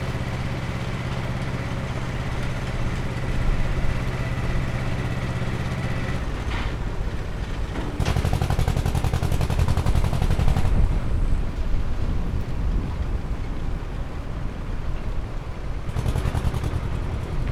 Machowino, field road - Ursus tractor
driving closely behind an old Polish Ursus tractor on a dirt road. The tractor was pulling a big trailer full of wood, chugging arduously towards its destination.